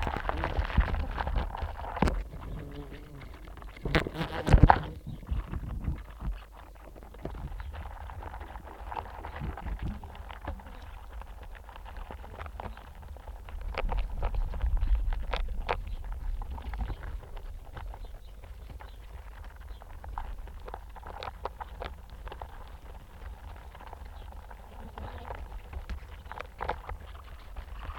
Forest Garden, UK - apple orchard
wasps and flies on the fallen apples
England, United Kingdom, 19 July 2022, 10:16